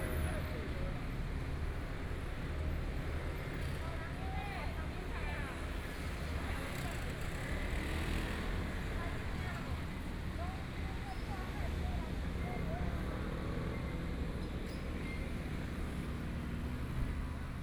Zhengqi Rd., Taitung City - At the intersection
Traffic Sound, Dialogue between the vegetable vendors and guests, Binaural recordings, Zoom H4n+ Soundman OKM II ( SoundMap2014016 -2)